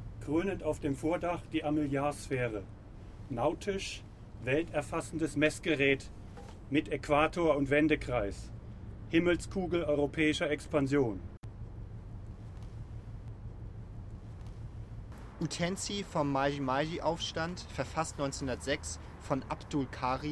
Echos unter der Weltkuppel 08 Über der Stadt MajiMaji